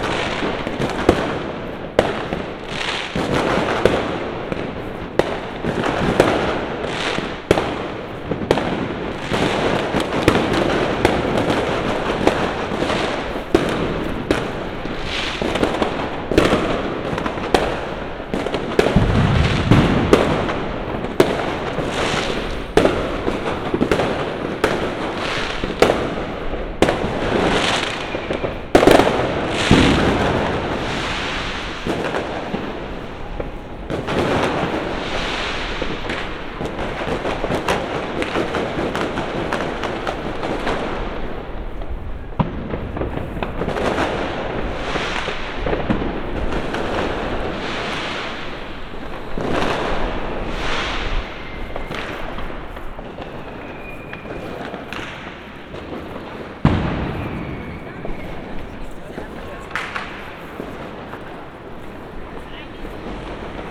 Rheinstraße, Berlin, Allemagne - New Year Eve Firework
Street fireworks at the passage of midnight on New Year Eve in the district of Friedenau, Berlin.
Recorded with Roland R-07 + Roland CS-10EM (binaural in-ear microphones)